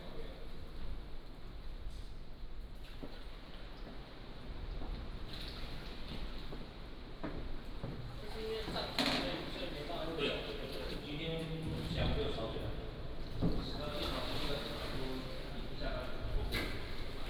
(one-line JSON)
{"title": "北海坑道, Nangan Township - Readiness tunnel", "date": "2014-10-14 14:24:00", "description": "walking in the Readiness tunnel", "latitude": "26.14", "longitude": "119.93", "altitude": "22", "timezone": "Asia/Taipei"}